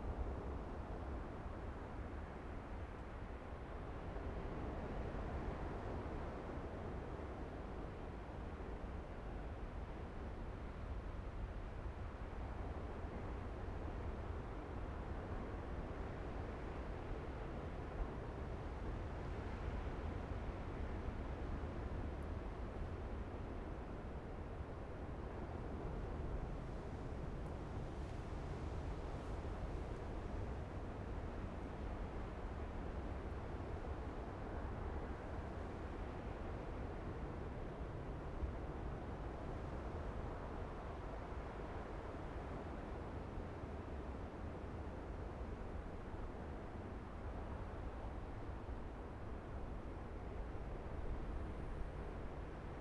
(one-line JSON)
{"title": "台東縣台東市 - The beach at night", "date": "2014-01-18 18:27:00", "description": "Sitting on the beach, The sound of the waves at night, Zoom H6 M/S", "latitude": "22.75", "longitude": "121.16", "timezone": "Asia/Taipei"}